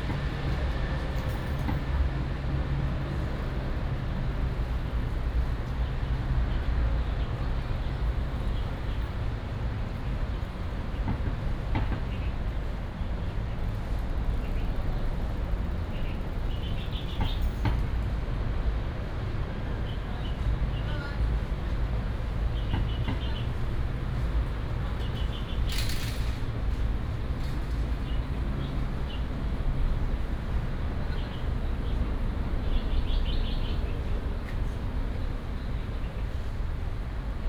Chirp, Traffic Sound, In the university